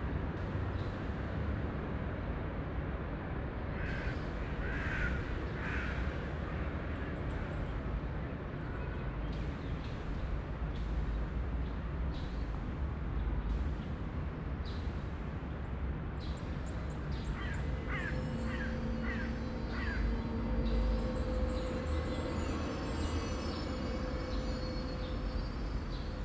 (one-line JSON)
{"title": "Cetatuia Park, Klausenburg, Rumänien - Cluj, Cetatuia, Fortress Hill, day sound installation", "date": "2014-05-26 11:00:00", "description": "At the monument of Cetatuia. A recording of the multi channel day composition of the temporary sound and light installation project Fortress Hill interfering with the city sound and light wind attacks. headphone listening recommeded.\nSoundmap Fortress Hill//: Cetatuia - topographic field recordings, sound art installations and social ambiences", "latitude": "46.77", "longitude": "23.58", "altitude": "396", "timezone": "Europe/Bucharest"}